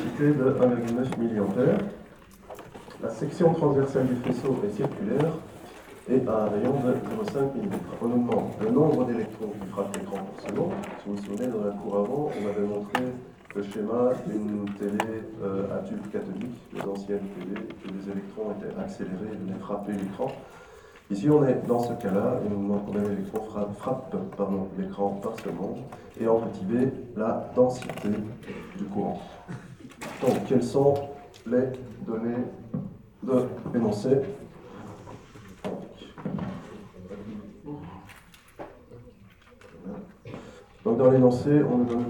Centre, Ottignies-Louvain-la-Neuve, Belgique - A course of electricity
In the very big Agora auditoire, a course of electricity. In first, a pause, and after, the course.